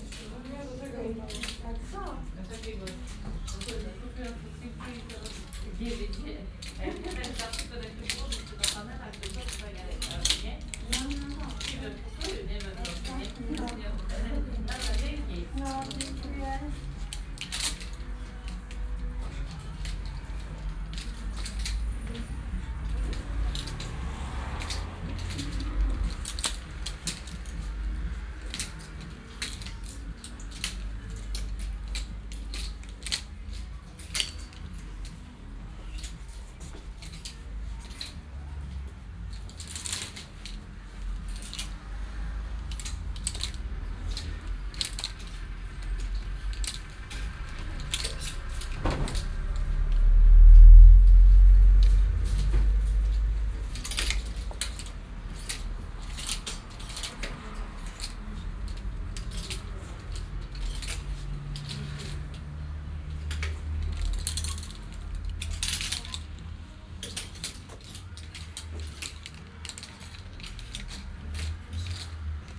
Adama Mickiewicza 1 street, Srem - secondhand shop
(binaural, please use headphones) recorded in a small shop with secondhand clothes. customers sliding plastic hangers with clothes, looking for a piece of clothing they like. small radio playing by the entrance, traffic noise from the street. (Roland r-07 + Luhd PM-01)